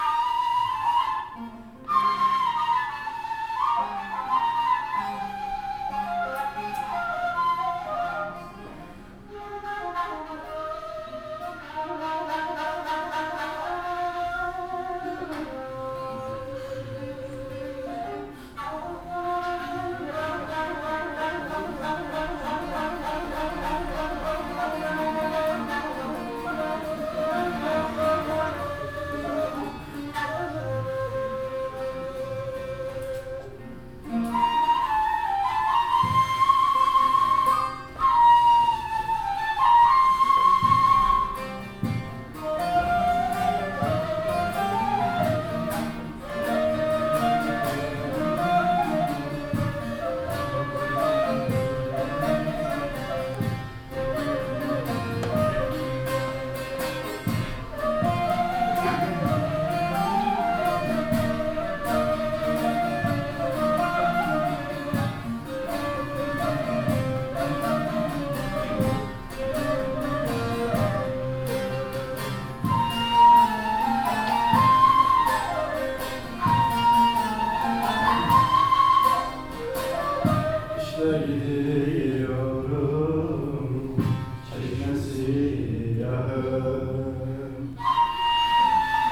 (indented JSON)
{
  "title": "Alevitisches Kulturzentrum, Hamm, Germany - Last song...",
  "date": "2014-09-12 20:38:00",
  "description": "Last song… the audience can’t help joining in…\nPoems recited by Güher Karakus and Uwe Westerboer;\nmusic by Kenan Buz and Gün Acer.\nThe event was part of the city’s Intercultural Weeks.",
  "latitude": "51.68",
  "longitude": "7.81",
  "altitude": "65",
  "timezone": "Europe/Berlin"
}